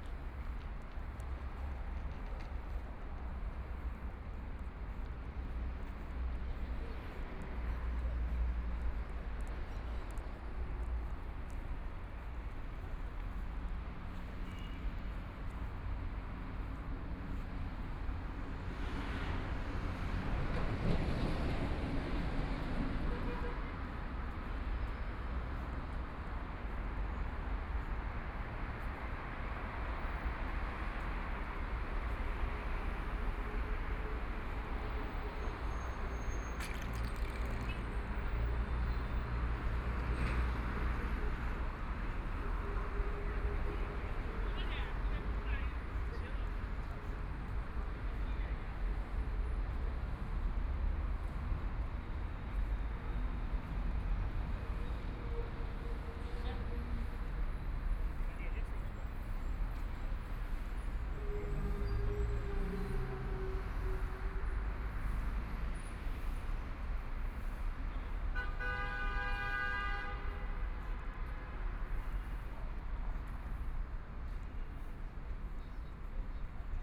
Huangxing Road, Shanghai - walking in the street
walking in the street, Binaural recording, Zoom H6+ Soundman OKM II
Shanghai, China, 20 November